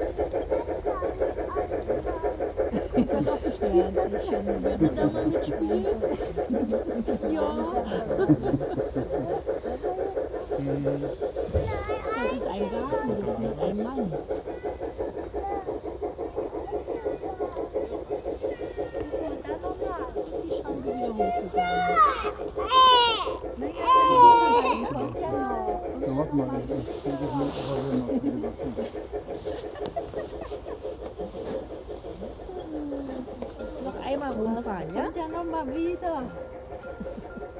Wonderful Model Trains in an Urban Garden
This front garden model train setup is stunning complete with station, different types of DB locomotives plus all the accompanying sounds. It attracts a small, but admiring, crowd of adults and children alike.
13 November 2011, ~16:00